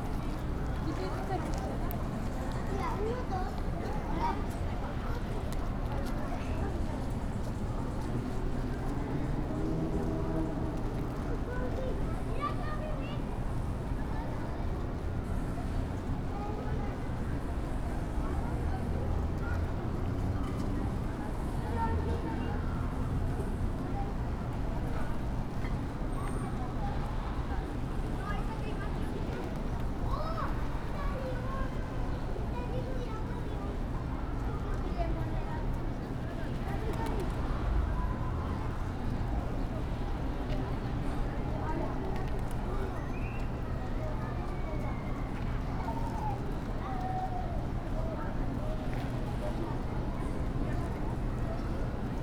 J. Ortiz de Domínguez, Centro, León, Gto., Mexico - Fuente de los Leones.
Fountain of the Lions.
It wasn't working this time.
I made this recording on july 25th, 2022, at 2:05 p.m.
I used a Tascam DR-05X with its built-in microphones and a Tascam WS-11 windshield.
Original Recording:
Type: Stereo
No estaba funcionando esta vez.
Esta grabación la hice el 25 de julio 2022 a las 14:05 horas.